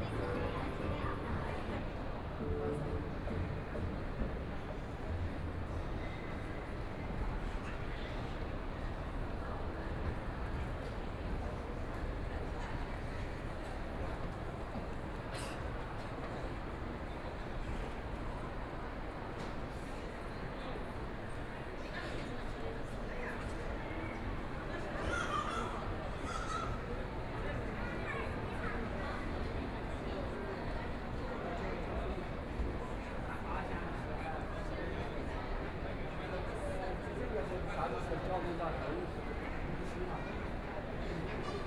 Super Brand Mall, Lujiazui Area - inside the mall
Walking inside the mall, Binaural recording, Zoom H6+ Soundman OKM II
Shanghai, China, 21 November 2013, 13:10